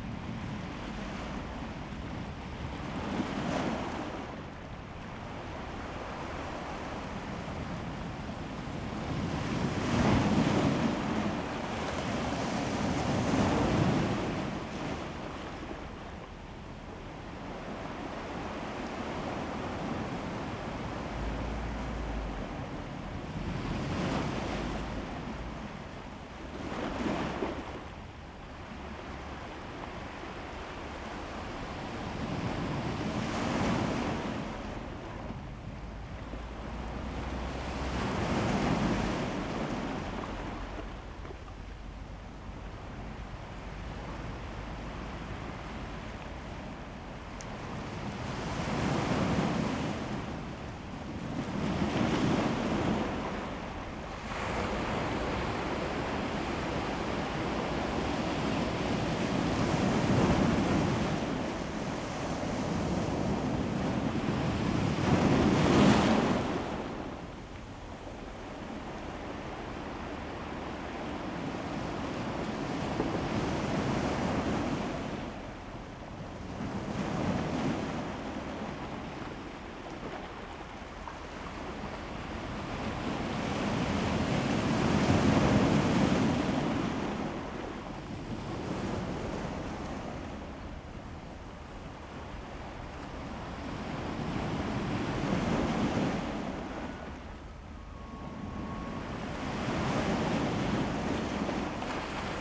富岡里, Taitung City - rock and the waves
Sound of the waves, Aircraft flying through, The weather is very hot, in the coast near the fishing port
Zoom H2n MS +XY